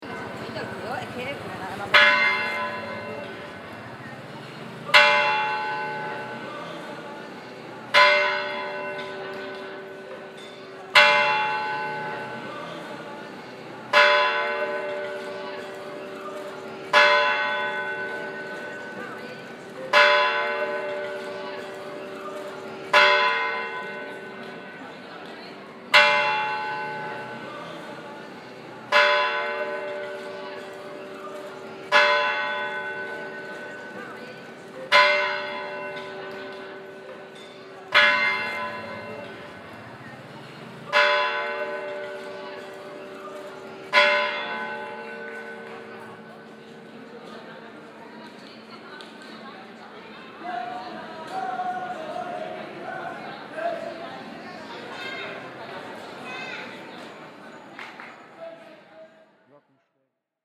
At the Parroquia De San Andrés in the evening. The sound of the hour bells.
international city sounds - topographic field recordings and social ambiences